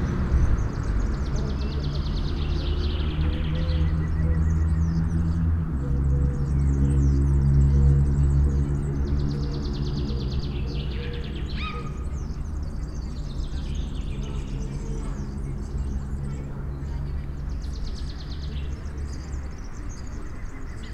Bôrický park, Žilina, Slovensko
Just another corona saturday afternoon in city park.
Stredné Slovensko, Slovensko, 28 March 2020, 12:32